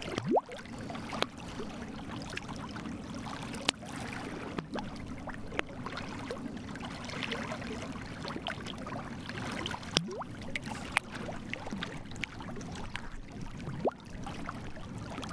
Wikwemikong, Ojibwe Reserve, Rocks Hitting Water, Morning